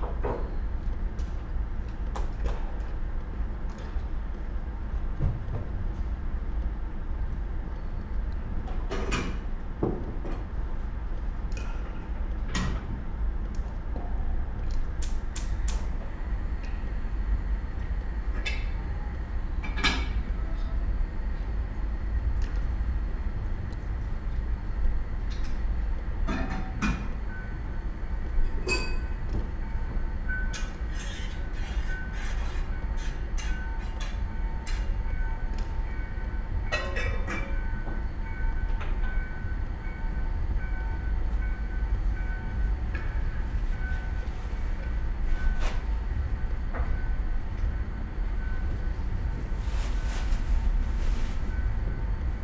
from/behind window, Mladinska, Maribor, Slovenia - pumpkin soup, wood cuter